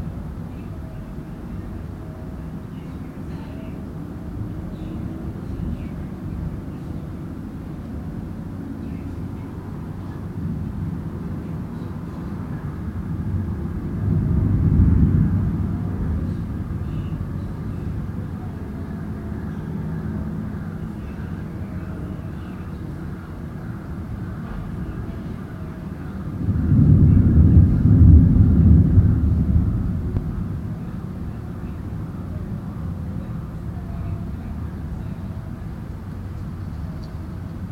Listening to rumbling thunder in the room and the recorder is facing the window. Strong wind and it is making the leaves knocking the window glass. In the background is the tv sound and the busy road nearby.
Kampung Batu Hampar, Melaka, Malaysia